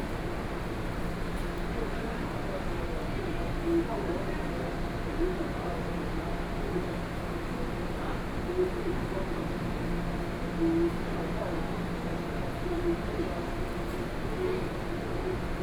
Taipei Main Station, Taiwan - On the platform
On the platform waiting for the train, Message broadcasting station, Sony PCM D50 + Soundman OKM II
台北市 (Taipei City), 中華民國